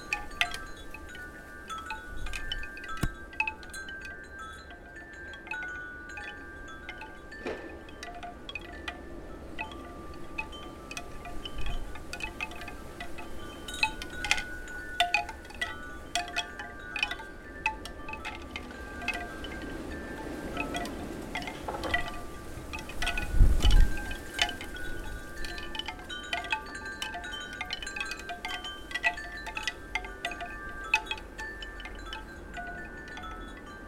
Rue Jean Jaurès, Saint-Nazaire, France - Chimes in a small garden
The wind and the chimes in a small garden in the city. ZoomH4 recording
France métropolitaine, France